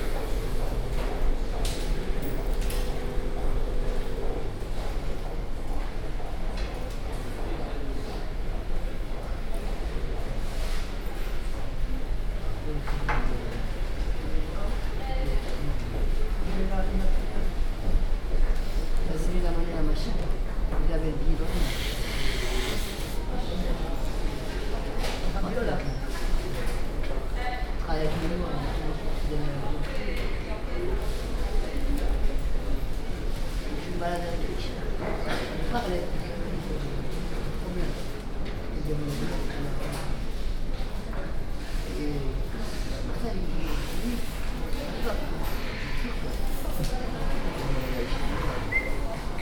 Brussels, Midi Station, homeless conversation